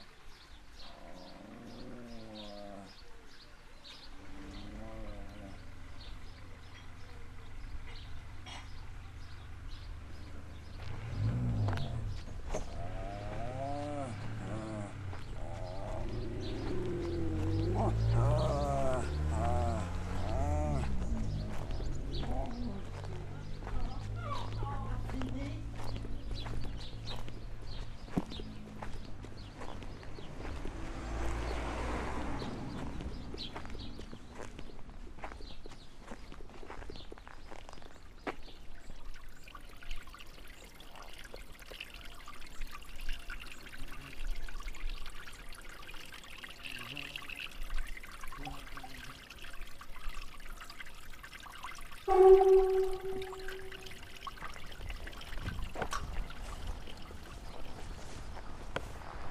Inauguration du 1er point d'ouïe mondial - Soundwalk - 18/07/2015 - #WLD2015